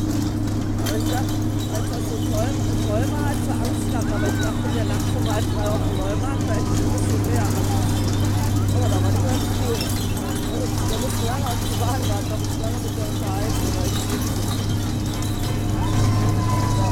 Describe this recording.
Musicians with drums and trumpet make a spontaneous session during the "Geisterzug" (Ghosts parade). The performance ends abruptly when an armada of municipal garbage collection trucks arrives, cleaning the streets of glas bottles. "Geisterzug" is an alternative and political carnival parade in Cologne, taking place in the late evening of carnivals saturday. Everyone who wants can join the parade.